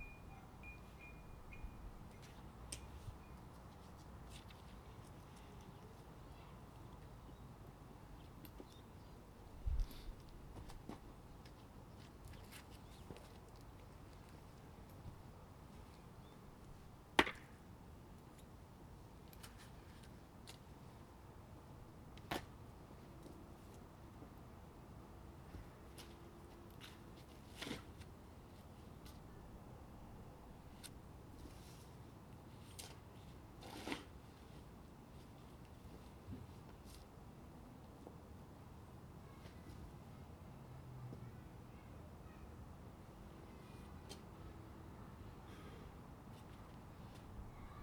Årstad, Bergen, Norway - Working in the garden